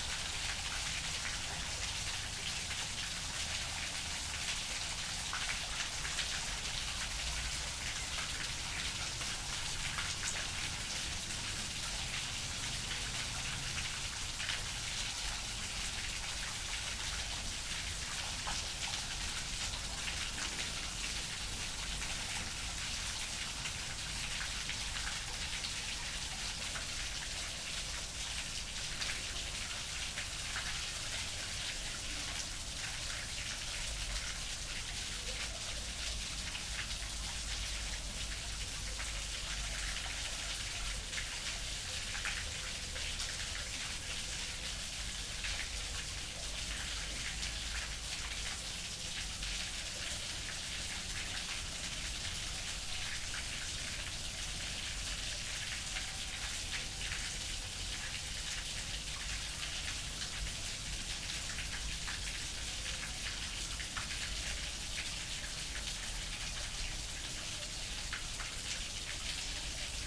Domain du Schlassgoard, Esch-sur-Alzette, Luxemburg - river Alzette, water inflow
some drainage into river Alzette, which runs in a concrete canal. Inflow decreases suddenly
(Sony PCM D50, Primo Em272)